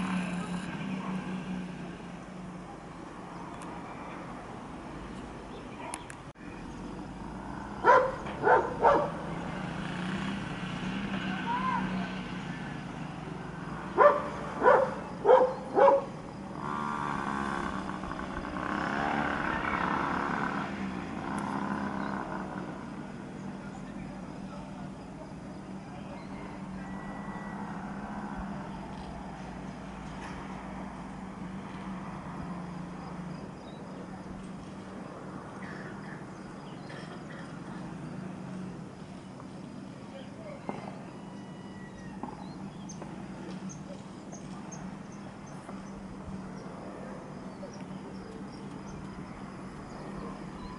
Chemin Lisiere de la Foret, Réunion - 20190113 15h15 CILAOS chemin lisière-de-la-foret
"en ces lieux qui évoquent la vie protégée, loin du bruit et la fureur du monde moderne", voici donc ce qu'on entend (et ce qu'on voit) du Chemin Lisière de la forêt.
Je teste l'appareil photo Sony DSC-HX60V en quête de trouver une "caméscope de dépannage" (je rêve de mieux mais c'est cher et lourd). Ce qu'on peut faire est cadrer, zoomer, faire des traveling plus ou moins et éviter de trop bouger. Le son est très bien rendu, l'image est exploitable mais il faut se contenter d'un réglage unique (pas de correction d'exposition ou de réglage personnel, faible dynamique pour les nuages). À la fin une séquence tournée au smartphone (galaxy s8), c'est différent mais pas meilleur et même décevant (surtout le son!!!) (pourtant le smartphone exploite un débit vidéo beaucoup plus lourd et une qualité de couleur meilleure pour les plantes vu de près). Pour montrer des aspects sonores et visuels de CILAOS, le petit appareil photo convient bien mieux.